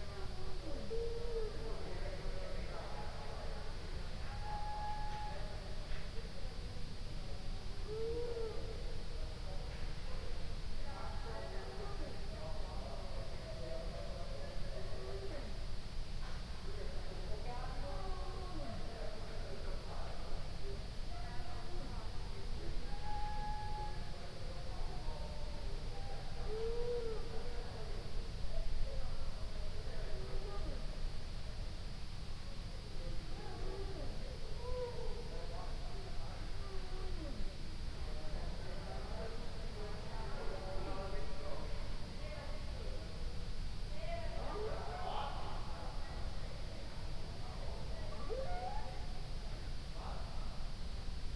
Ascolto il tuo cuore, città. I listen to your heart, city. Several chapters **SCROLL DOWN FOR ALL RECORDINGS** - Round midnight song of the whales in the background in the time of COVID19: soundscape.
"Round midnight song of the whales in the background in the time of COVID19": soundscape.
Chapter CXLIV of Ascolto il tuo cuore, città. I listen to your heart, city
Wednesday November 11th 2020. Fixed position on an internal terrace at San Salvario district Turin, almost three weeks of new restrictive disposition due to the epidemic of COVID19.
On the terrace I diffused the CD: “Relax with Song of the whales”
Start at 11:51 p.m. end at 00:13 a.m. duration of recording 22’29”
Torino, Piemonte, Italia, 27 November